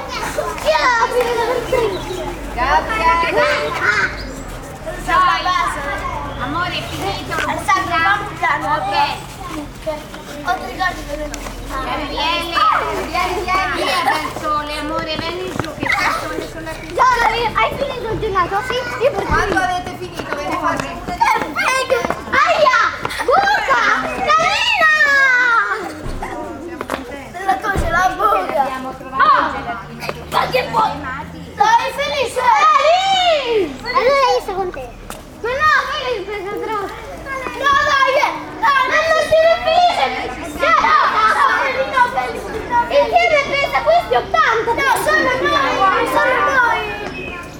Kids & Teachers at the public park.
Recorded with a SONY IC RECORDER ICD-PX440
Serra De Conti AN, Italy, 26 May 2018